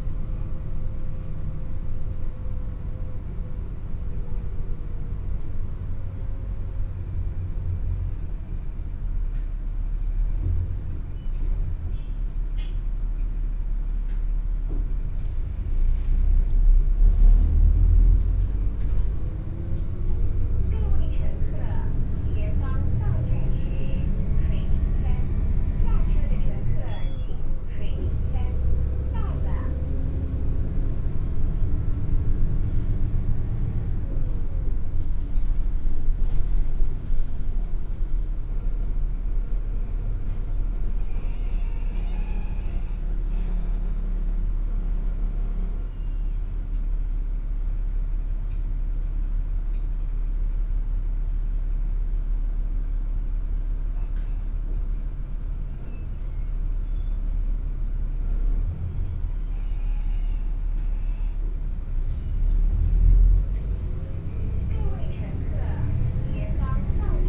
{
  "title": "Shuilin Township, Yunlin County - Take the bus",
  "date": "2018-02-15 12:35:00",
  "description": "Take the bus, In the bus, lunar New Year, Bus message broadcast sound\nBinaural recordings, Sony PCM D100+ Soundman OKM II",
  "latitude": "23.57",
  "longitude": "120.24",
  "altitude": "9",
  "timezone": "Asia/Taipei"
}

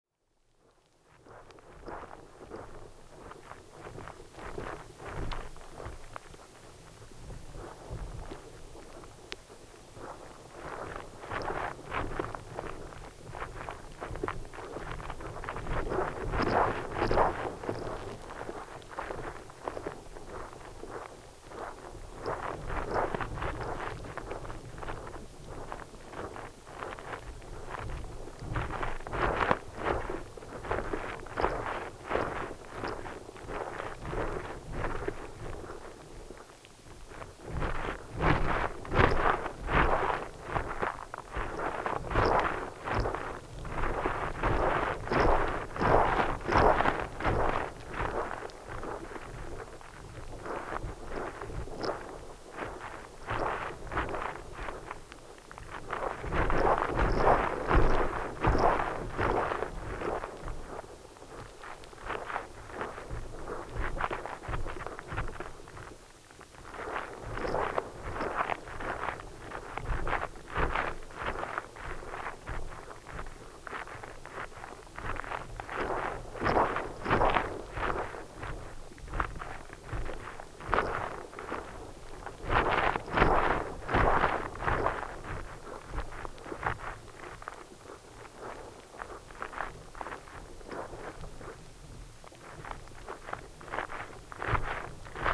{
  "title": "Lithuania, Utena, in the sand of shore",
  "date": "2011-09-21 15:50:00",
  "description": "again, Ive placed contact microphones in the sand on the shore to listen what goes on when waves play rhythmically",
  "latitude": "55.52",
  "longitude": "25.65",
  "timezone": "Europe/Vilnius"
}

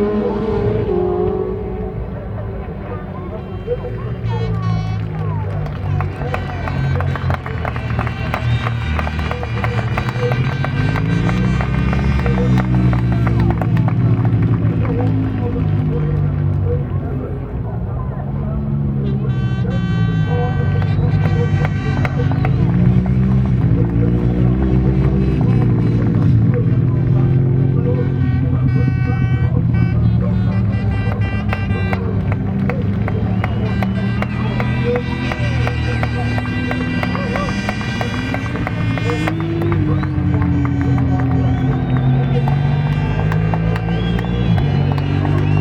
{
  "title": "Unit 3 Within Snetterton Circuit, W Harling Rd, Norwich, United Kingdom - BSB 2001... Superbikes ... Race 1 ...",
  "date": "2001-05-07 13:55:00",
  "description": "BSB 2001 ... Superbikes ... Race 1 ... one point stereo mic to minidisk ... commentary ...",
  "latitude": "52.46",
  "longitude": "0.95",
  "altitude": "41",
  "timezone": "Europe/London"
}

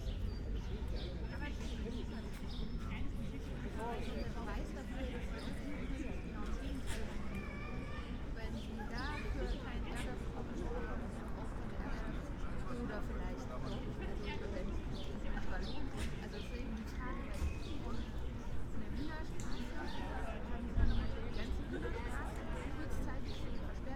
{
  "title": "Ohlauer Str., Kreuzberg, Berlin - protests for refugees",
  "date": "2014-06-29 11:30:00",
  "description": "ongoing protests and support for refugees in a nearby school. street ambience without cars, instead people are sitting around talking.\n(log of the aporee stream, ifon4/tascam ixj2, primo em172)",
  "latitude": "52.50",
  "longitude": "13.43",
  "altitude": "40",
  "timezone": "Europe/Berlin"
}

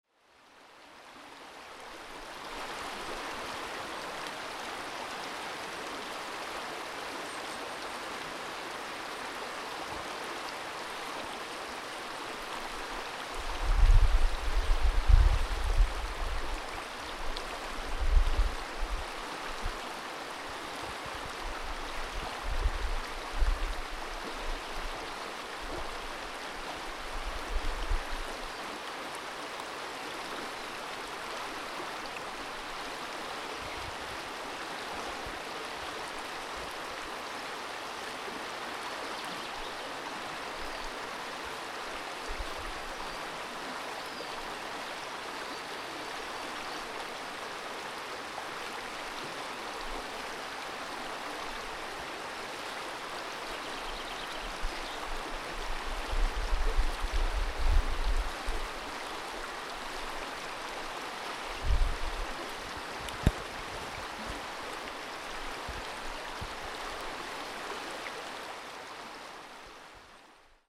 {
  "title": "Roshchino, Leningrad Olast, RU - Sounds of The Roshchinka river and birds",
  "date": "2020-05-20 17:47:00",
  "description": "The Lindulovskaya Grove - State Natural Botanical Reserve (est.1738)",
  "latitude": "60.24",
  "longitude": "29.53",
  "altitude": "44",
  "timezone": "Europe/Moscow"
}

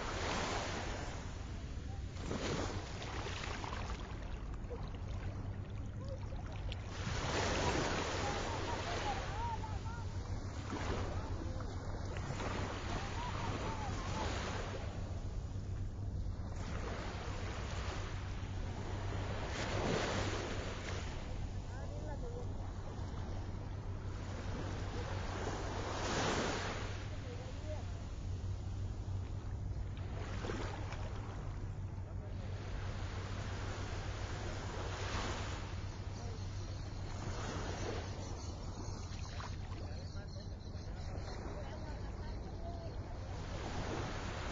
{
  "title": "Cra., Santa Marta, Magdalena, Colombia - Oleaje en Santa Marta",
  "date": "2018-10-16 07:18:00",
  "description": "Sonido en playa de Santa Marta, Colombia. Grabación con EDIROL By Roland para proyecto de web cultural Agenda Samaria",
  "latitude": "11.25",
  "longitude": "-74.21",
  "altitude": "2",
  "timezone": "GMT+1"
}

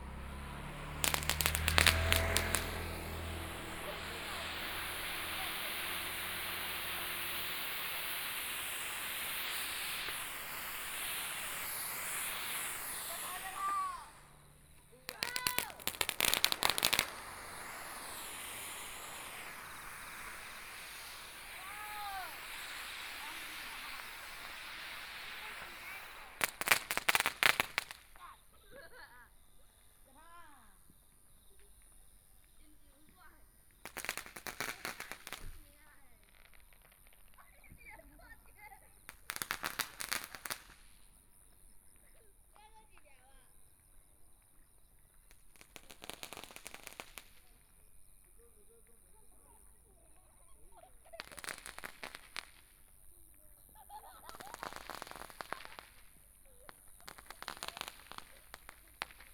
{
  "title": "雲林縣水林鄉蕃薯村 - small Town",
  "date": "2014-01-31 20:10:00",
  "description": "Walking in the small streets, Traffic Sound, Kids playing firecrackers, Firecrackers sound, Motorcycle Sound, Binaural recordings, Zoom H4n+ Soundman OKM II",
  "latitude": "23.54",
  "longitude": "120.22",
  "timezone": "Asia/Taipei"
}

